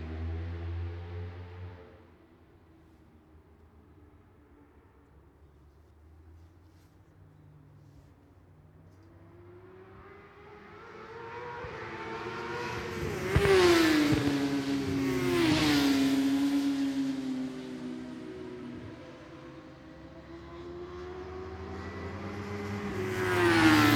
Brands Hatch GP Circuit, West Kingsdown, Longfield, UK - british superbikes 2005 ... superbikes ...
british superbikes 2005 ... superbikes qualifying two ... one point stereo mic to minidisk ...
8 October 2005